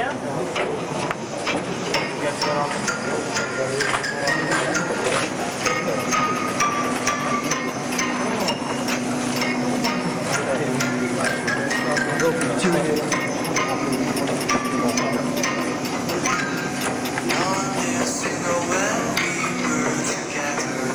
Ernst-Reuter-Platz, Monheim am Rhein, Deutschland - Listen Ernst Reuter Platz - excerpt of world listening day 22
A project in cooperation wth Radio Rakete - the internet radio of Sojus 7.
soundmap nrw - topographic field recordings and social ambiences
18 July 2022, 17:00